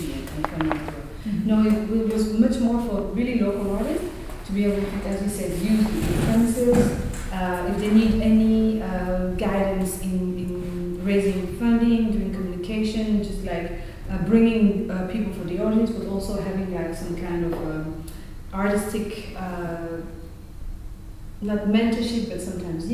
Helios Theater, Hamm, Germany - What do you know about Rwanda…
After-performance talk by the team of Ishyo Art Centre Kigali. Carole Karemera and her team of actors had come to Hamm for a week as guests of the Helios Children Theatre and the “hellwach” (bright-awake) 6th International Theatre Festival for young audiences.
With Carole Karemera, Michael Sengazi and Solange Umhire (Ishyo Art Centre), moderated by Birte Werner of the “Bundesakademie fur Kulturelle Bildung” (academy of cultural education); introduced by Michael Lurse (Helios Theater).
The entire talk is archived here:
19 June, ~18:00